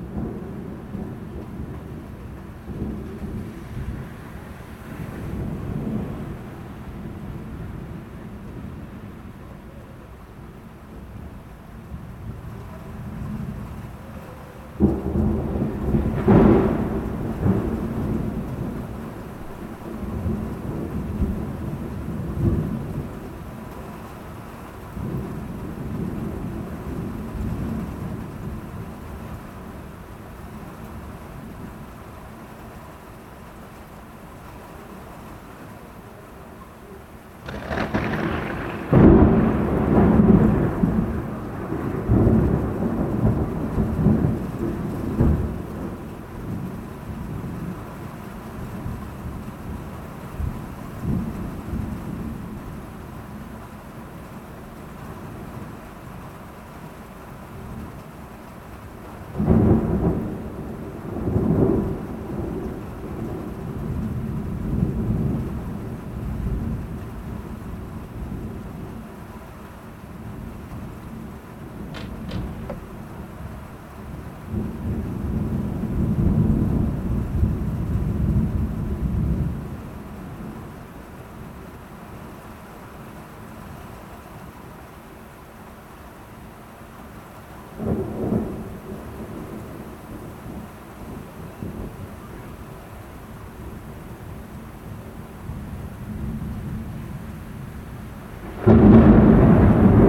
Ave, Ridgewood, NY, USA - Severe Thunderstorm, NYC
Recording of the severe thunderstorm that hit NYC after a hot and humid afternoon.
Contact mic placed on the apartment window + Zoom H6